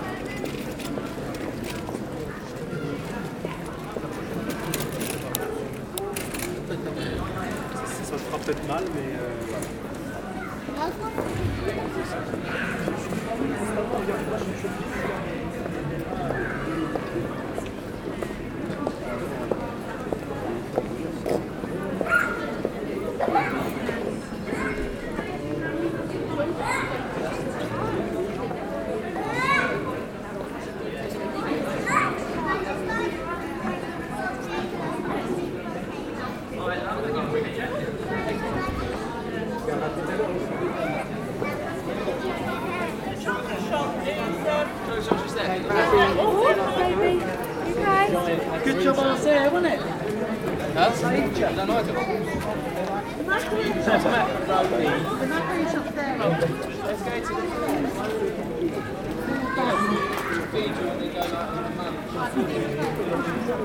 Chartres, France - A pedestrian way
People walking in a beautiful and pleasant pedestrian way.
30 December 2015, 13:00